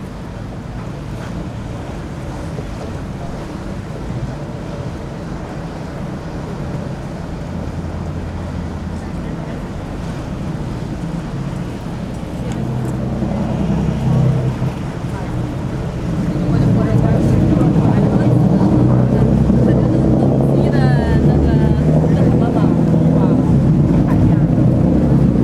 venezia la calcina - la calcina/ruskin house
venezia dorsoduro: la calcina/ruskin house
Italia, European Union, 24 October 2009